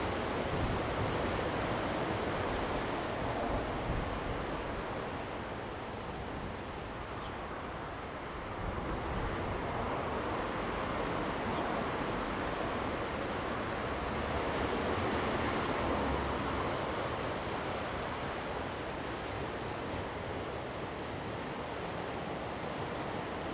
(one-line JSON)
{"title": "齒草橋休憩區, Taitung County - the waves and Traffic Sound", "date": "2014-09-08 13:49:00", "description": "Sound of the waves, Traffic Sound\nZoom H2n MS+XY", "latitude": "23.30", "longitude": "121.44", "altitude": "5", "timezone": "Asia/Taipei"}